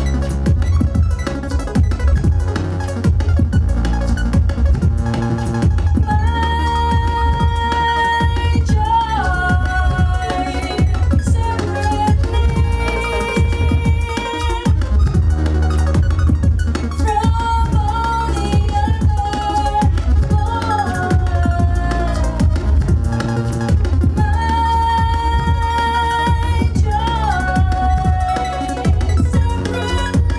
Concert at Der Kanal, Weisestr. - Der Kanal, Das Weekend zur Transmediale: elle p.
elle p. plays solo at DER KANAL. the young berlin based electronic craftswoman and singer made us dance and any aching tooth could be forgotten.